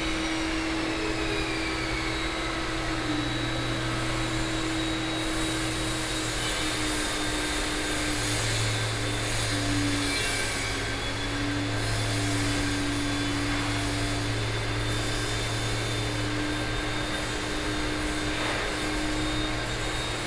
台北市立美術館, Taiwan - Construction
Construction, Aircraft flying through